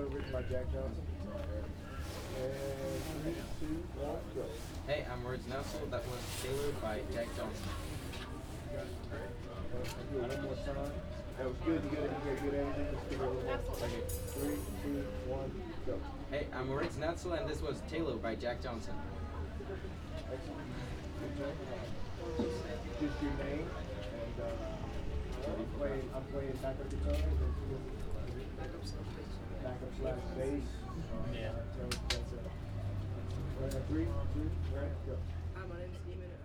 neoscenes: high school kids singing
AZ, USA, 11 December 2009, 10:06